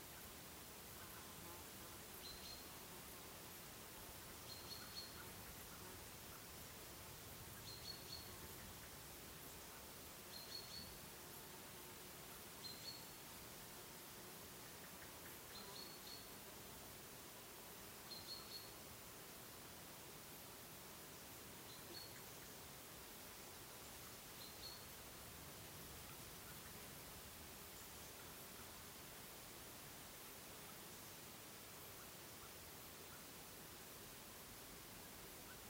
summer garden
garden, near forest with birds.
stafsäter recordings.
recorded july, 2008.